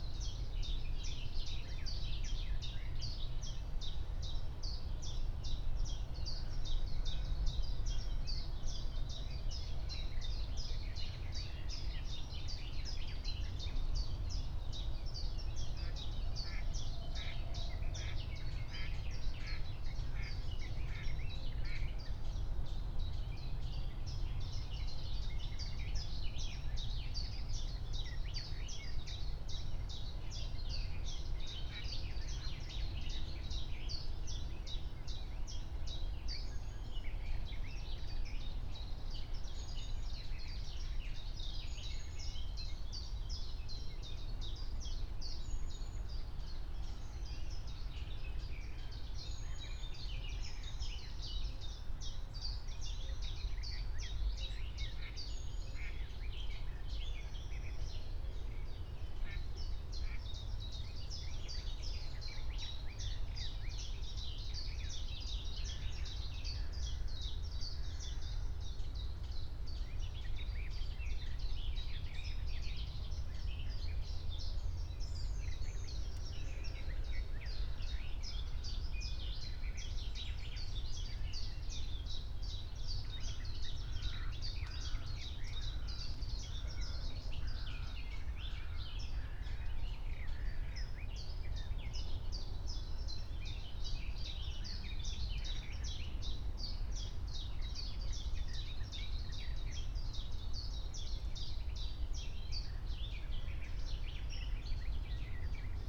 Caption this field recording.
04:30 Berlin, Wuhletal - Wuhleteich, wetland